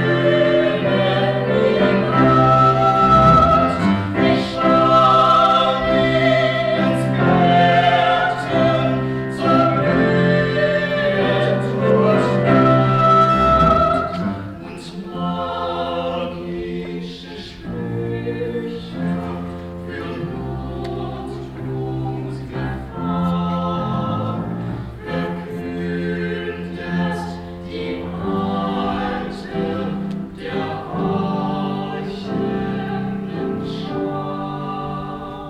Inside the Meeteren concert hall at a preliminary of the music school students. The sound of a four people choir accompanied by piano and finished by applause.
This recording is part of the intermedia sound art exhibition project - sonic states
soundmap nrw - topographic field recordings, social ambiences and art places